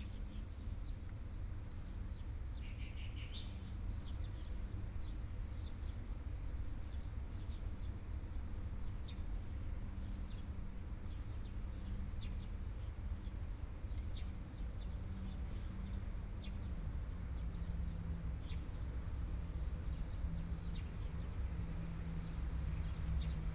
慶興廟, Wujie Township - In the temple plaza
In the temple plaza, Hot weather, Traffic Sound, Birdsong, Small village